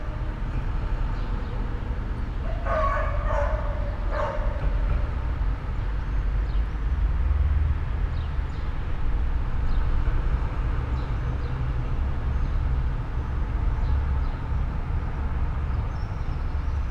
all the mornings of the ... - jun 13 2013 thursday 07:07